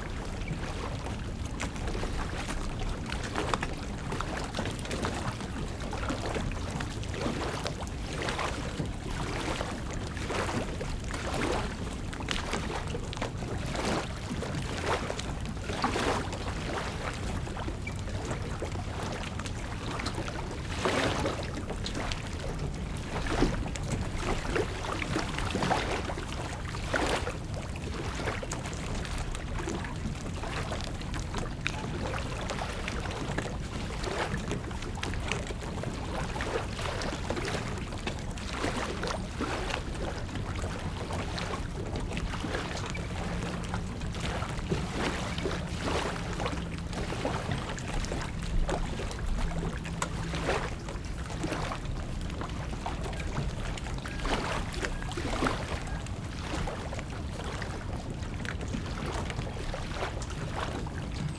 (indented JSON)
{
  "title": "Red Flynn Dr, Beacon, NY, USA - Hudson River at Beacon Sloop Club",
  "date": "2017-10-05 14:30:00",
  "description": "Sounds of the Hudson River at Beacon Sloop Club. Zoom H6",
  "latitude": "41.51",
  "longitude": "-73.99",
  "altitude": "1",
  "timezone": "America/New_York"
}